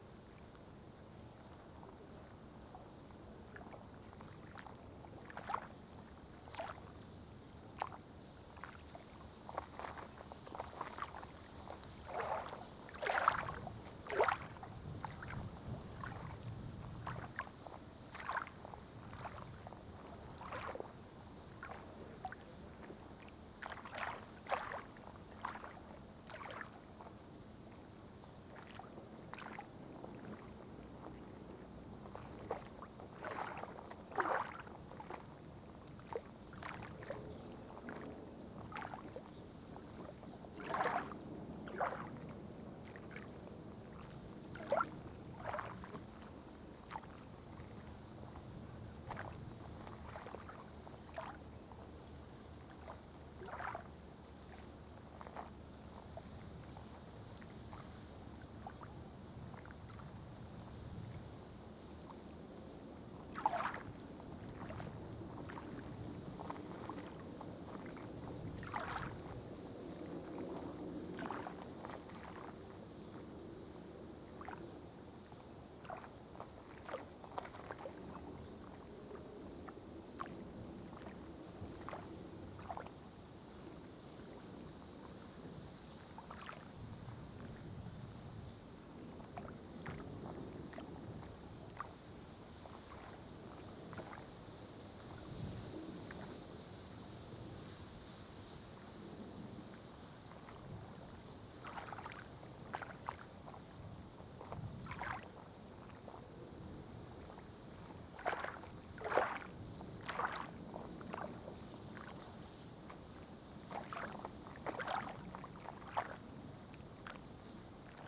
Kirchmöser Ost - Möserscher See, gentle waves
Kirchmöser, Brandenburg, gentle waves lapping at Möserscher See, wind coming from the east
(Sony PCM D50)
Brandenburg, Deutschland, 31 August 2022, 17:34